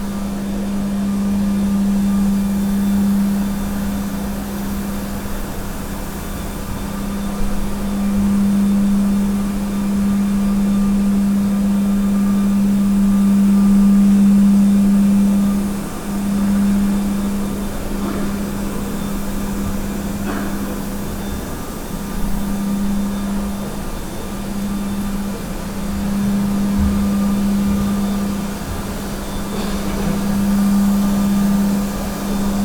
Poznan, Krolowej Jadwigi street, Maraton building - entrance loby of Maraton Office building
floor cleaning machine operating in the loby of Maraton Office building. conversations of office workers going in and out on a patio for a smoke. (roland r-07)
województwo wielkopolskie, Polska, November 2019